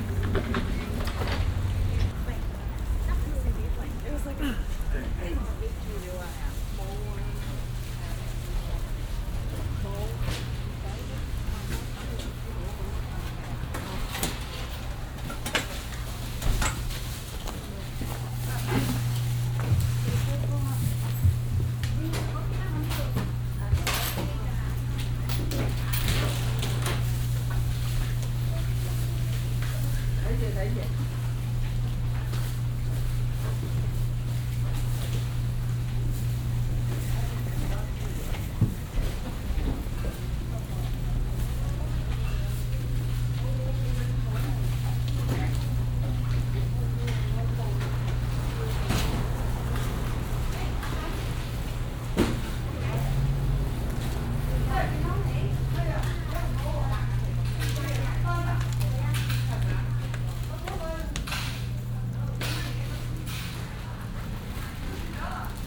vancouver - east georgia street - chin. market
in a chinese supermarket in china town
soundmap international
social ambiences/ listen to the people - in & outdoor nearfield recordings